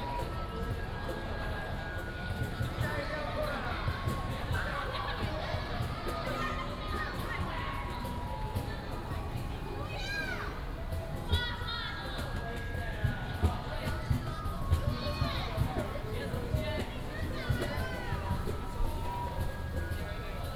中正公園, Zhongli District - in the Park
Many high school students, High school student music association, birds